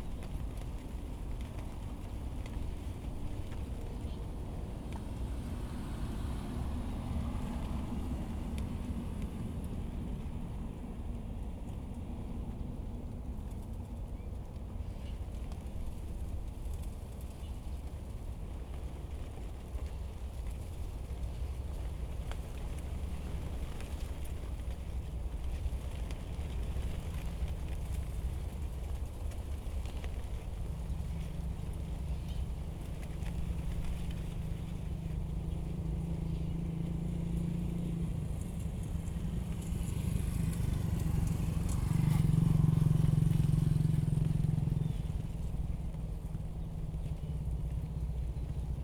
布袋濕地生態園區, 嘉義縣布袋鎮 - Wind and leaves
Wetland area, Bird sounds, Wind, Traffic sound, There are planes in the distance, Wind and leaves
SoundDevice MixPre 6 +RODE NT-SF1 Bin+LR
臺灣省, Taiwan, 9 August 2020, ~17:00